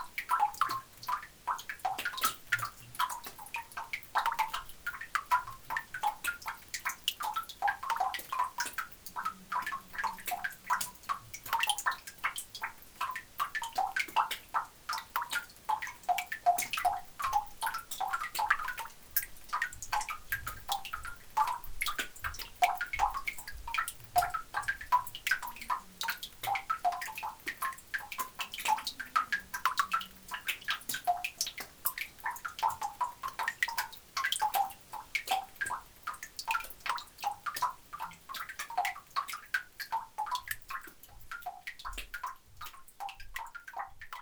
Arâches-la-Frasse, France - Coal mine
Drops falling onto the ground into an underground lignite mine. It's an especially dirty place as the coal is very dark and greasy.
10 June 2017